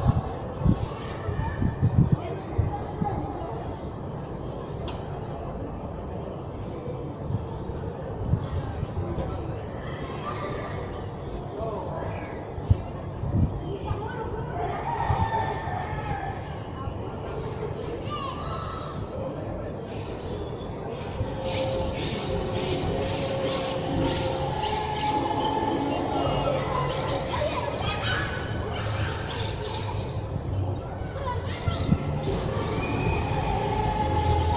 niños jugando en plaza, gallo cantando, musica bar latino, grabadora movil jiayu g4s
Children playing in a small square near the mountain, meanwhile a rooster cry nearby and test of a latin bar. recorded with a jiayu g4s movil